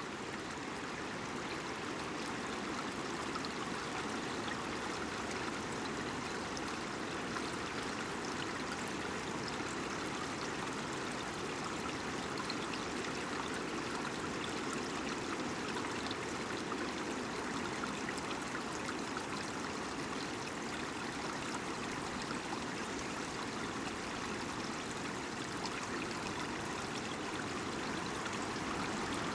{"title": "Voverynė, Lithuania, the valley", "date": "2021-11-06 17:10:00", "description": "Valley with streamlets. Winds roaring above.", "latitude": "55.53", "longitude": "25.61", "altitude": "129", "timezone": "Europe/Vilnius"}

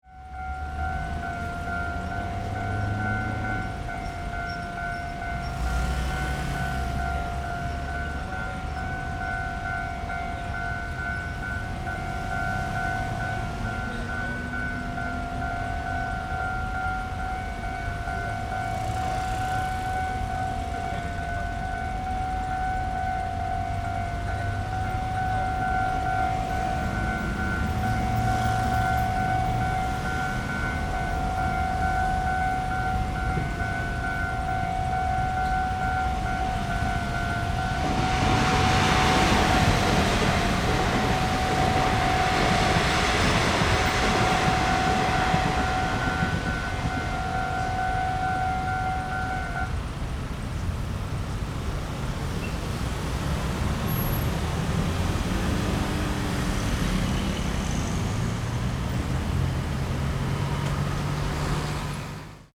{"title": "Zuoying District, Kaohsiung - Level crossing", "date": "2012-03-03 15:32:00", "description": "Warning tone, Train traveling through, Traffic Noise, Rode NT4+Zoom H4n", "latitude": "22.68", "longitude": "120.30", "altitude": "7", "timezone": "Asia/Taipei"}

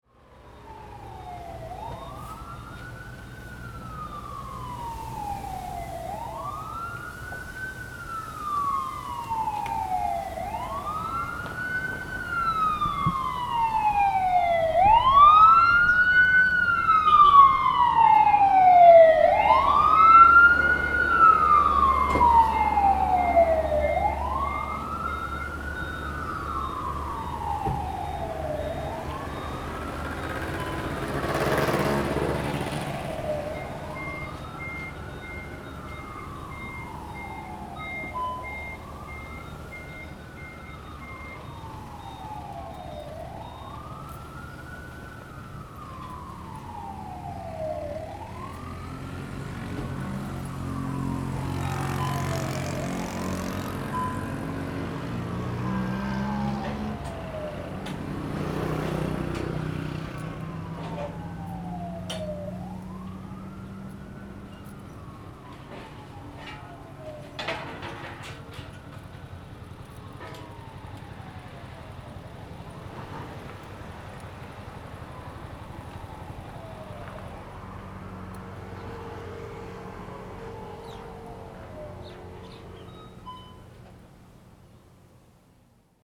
{"title": "Dinglin Rd., Kinmen County - Fire warning sound", "date": "2014-11-03 09:23:00", "description": "Fire warning sound, In the convenience store, Parking lot\nZoom H2n MS+XY", "latitude": "24.45", "longitude": "118.34", "altitude": "22", "timezone": "Asia/Taipei"}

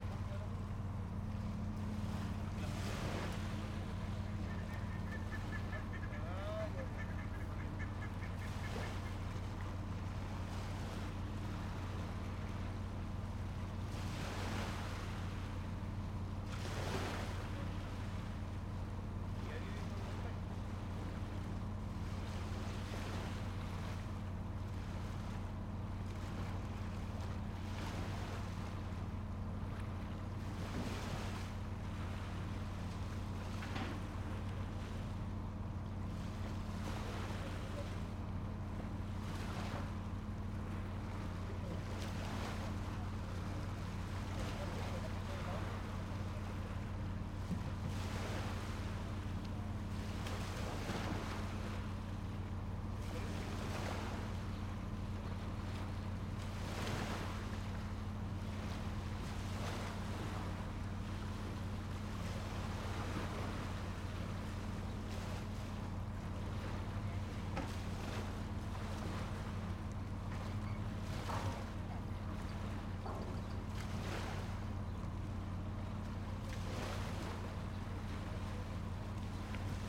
{"title": "Arturo Prat, Corral, Valdivia, Los Ríos, Chili - LCQA AMB CORRAL MORNING HARBOR FERRY VOICES BIRDS MS MKH MATRICED", "date": "2022-08-27 10:30:00", "description": "This is a recording of the harbour located in Corral. I used Sennheiser MS microphones (MKH8050 MKH30) and a Sound Devices 633.", "latitude": "-39.88", "longitude": "-73.42", "altitude": "9", "timezone": "America/Santiago"}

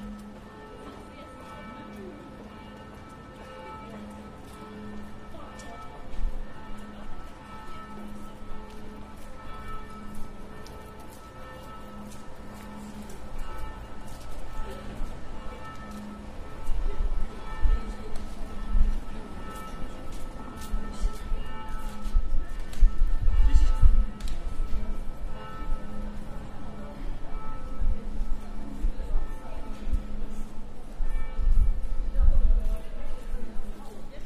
{"title": "Czech Rep., Olomouc, Horní náměstí", "date": "2011-03-16 18:00:00", "description": "corner at the main square, 6pm", "latitude": "49.59", "longitude": "17.25", "altitude": "229", "timezone": "Europe/Prague"}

